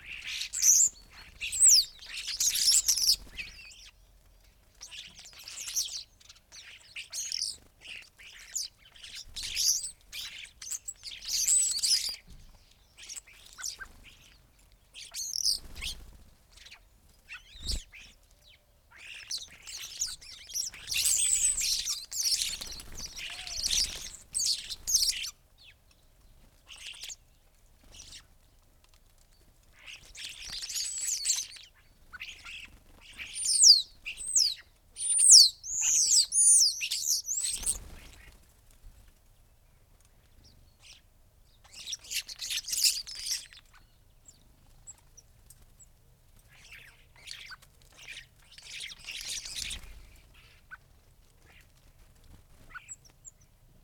Luttons, UK - starlings on bird feeders ...
starlings on bird feeders ... open lavalier mic clipped to bush ... recorded in mono ... calls from collared dove ... blackbird ... dunnock ... greenfinch ... some background noise ...